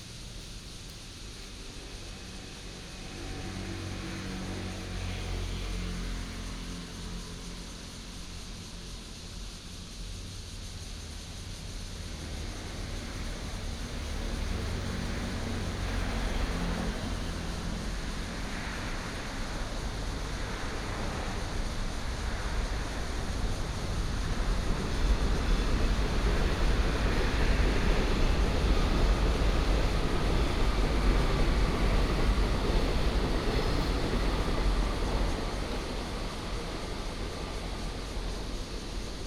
Sec., Huannan Rd., Pingzhen Dist. - traffic sound
Cicada cry, The train runs through, traffic sound